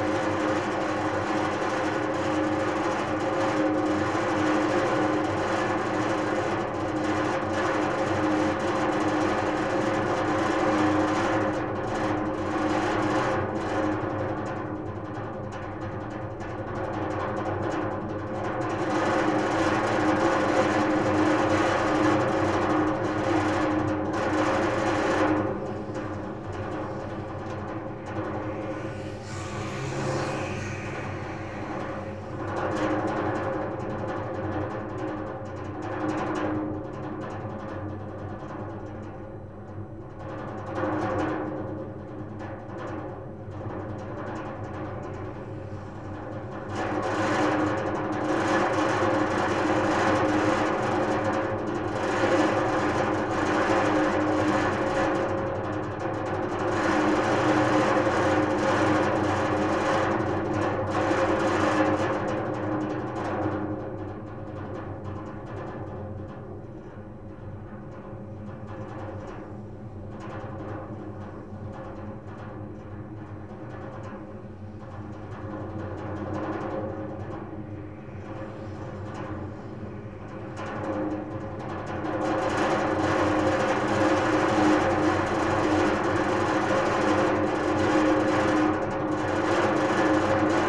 Bd Pierre-Paul Riquet, Toulouse, France - metalic vibration 01
ventilation system metalic vibration
Capatation : ZOOMh4n + AKG C411PP
France métropolitaine, France, 26 March 2022